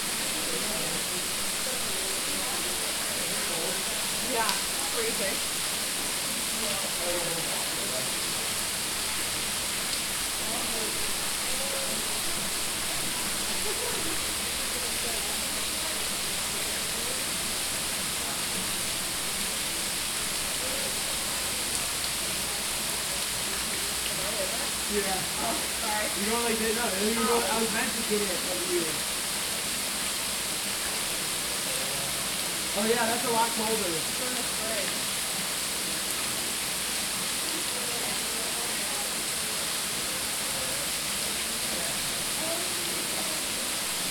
Unnamed Road, Dodgeville, WI, USA - Saturday at Stephens Falls
Families taking pictures and playing in the water underneath Stephens' Falls in Governor Dodge State Park. Recorded with a Tascam DR-40 Linear PCM Recorder.